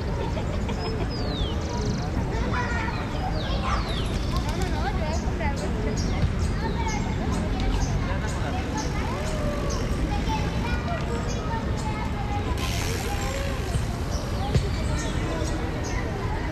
# a, Cl., Bogotá, Colombia - Parque de Los Azules
El Parque de Los Azules is a famous neighborhood park with a great variety of elements for recreation, it’s a place where many children and young people go to have fun. The fundamental sound is the sound of traffic, because despite being a quiet park, the distance between it and Highway 30 is five blocks, therefore, the sound of traffic is very noticeable, especially in low frequencies. As a sound signal we find the singing of birds, the barking of dogs and the passing of bicycles. The characteristic sound mark of the place are the screams of children, who shout recognizable words in Spanish. You hear the word "tapabocas" a couple of times, this is an important factor, knowing that we are in 2021.